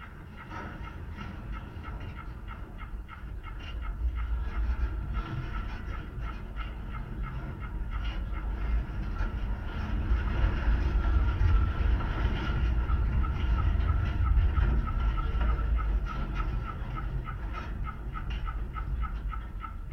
Utena, Lithuania, rusted wire
contact microphones on a rusty wire